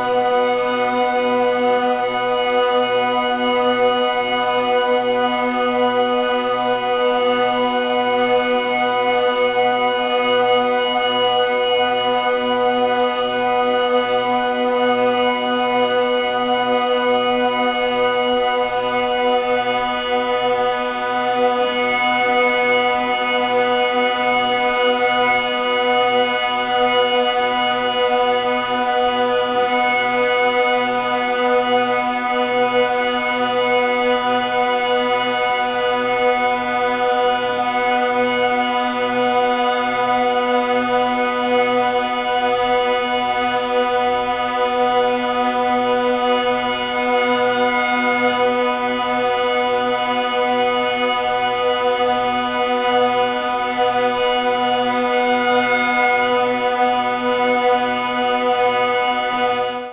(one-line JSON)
{"title": "berlin, flughafenstr., staalplaat - staalplaat: michael northam playing", "date": "2009-04-30 22:55:00", "description": "30.04.2009 22:55 concert by michael northam", "latitude": "52.48", "longitude": "13.43", "altitude": "55", "timezone": "Europe/Berlin"}